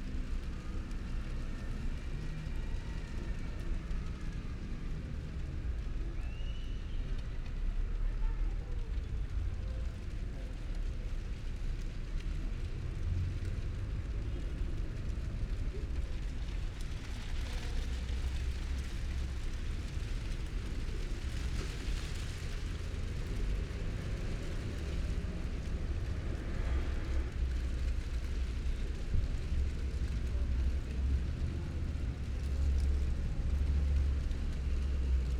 Gáldar, Gran Canaria, San Sebastian Square